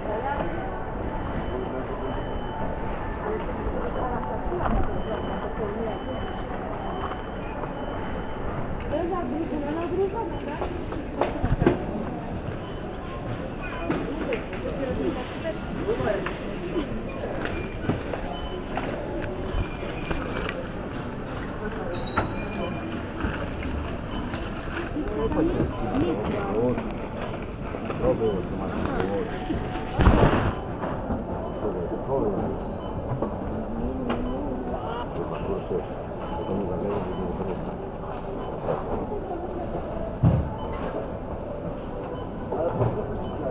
Centrum Handlowe Turzyn, Szczecin, Poland
Ambiance inside supermarket.